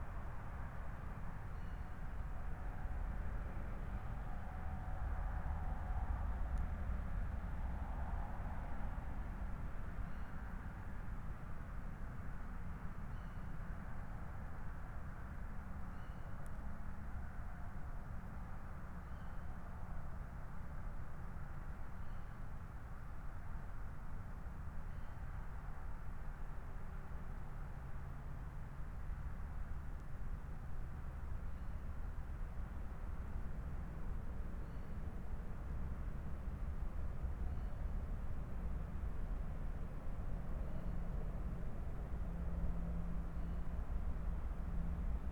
Berlin, Buch, Wiltbergstr. - Remote audio stream from woodland beside the silent River Panke
Remote streaming in the woodland beside the silent River Panke, which is canalised here and fairly narrow. Most of the sound is autobahn traffic. Trains pass regularly. These are constant day and night. In daylight there should also be song birds, great tits, blackbirds, plus nuthatch and great spotted woodpecker.